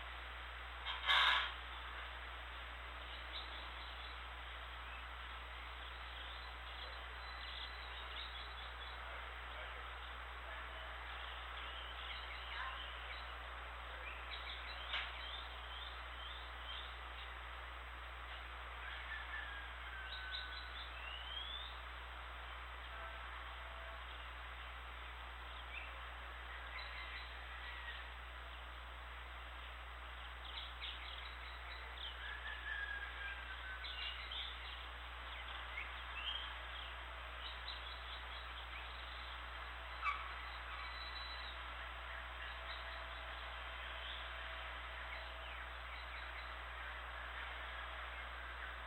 Santa Marta (Distrito Turístico Cultural E Histórico), Magdalena, Colombia - Mirando la avenida escuchando el Mar
Soundscape de cuando vivía en Santa MArta